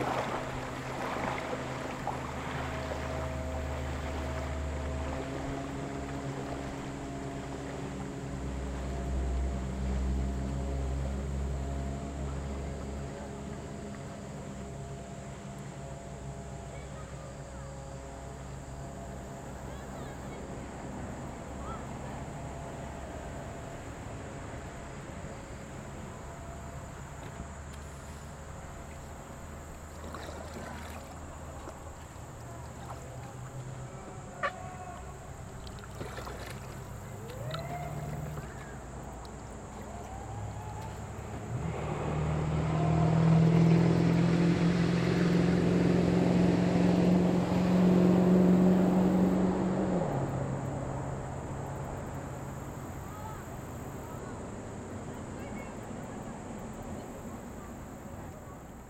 Meramec River, Sullivan, Missouri, USA - Meramec River Jon Boat
It is to be expected that if you float an Ozark stream in the summer on the weekend you will have no peace. Sunday is family day on the rivers and things are a bit tamer. In this recording you can hear a jon boat slow down for a family with small children strewn across the river on giant inflatable pool toy animals. It then speeds up passing me though I have knowingly stopped my packraft on a sandbar. This is all followed by a pickup truck overtaking a car on the two lane highway above the river. Note shouts of appreciation for the boat’s wake and the aggressive driving.
Missouri, United States, 21 August 2022, 11:40